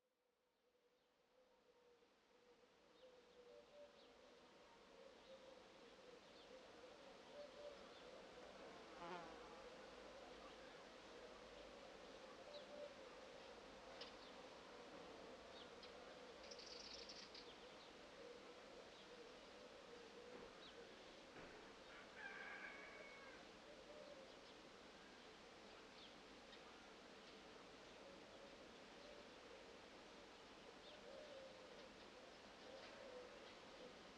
Ano Petali, Sifnos, Greece - Sifnos Bells - August 15th
August 15th is a major religious holiday in Greece. in the morning, all churches ring their bells. the recording is of this soundscape, facing east from the point on the map, with many small churches on either side of the valley at various distances. the original was 150 minutes long, so this is an edited version. (AT8022, Tascam DR40)